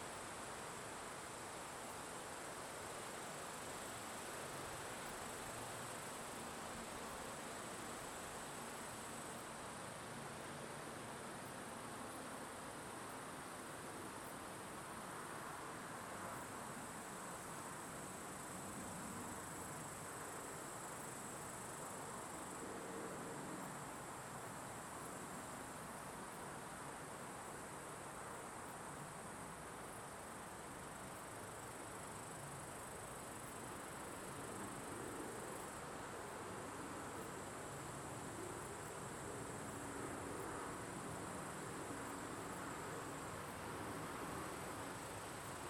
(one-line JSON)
{"title": "Unnamed Road, Тульская обл., Россия - In the grass", "date": "2020-09-13 14:55:00", "description": "You can hear insects and plants making sounds in the grass, trees rustling their leaves, and the wind blowing. At the very end, you can hear the hum of an airplane.", "latitude": "54.82", "longitude": "37.28", "altitude": "127", "timezone": "Europe/Moscow"}